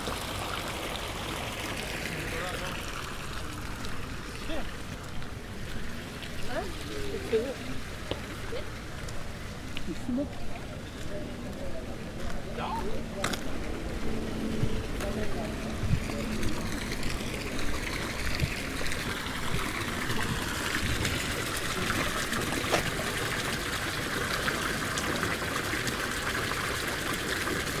Le calme de la cour intérieure du Musée des Beaux Arts avec sa fontaine . Difficile de s'imaginer au centre ville!